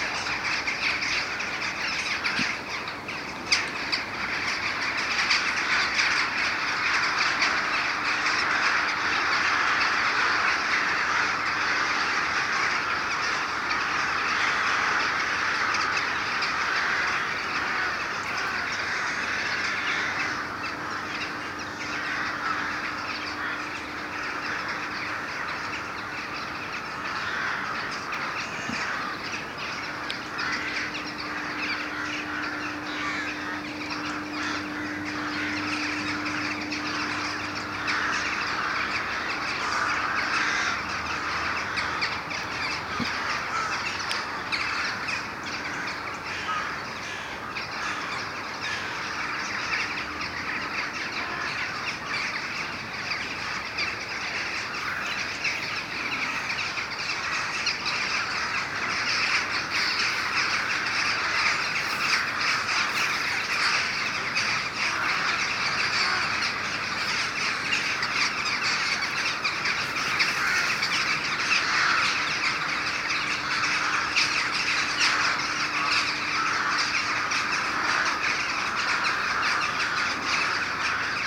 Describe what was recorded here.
Walking on the river Seine bank, we disturbed a huge crows and jackdaws group.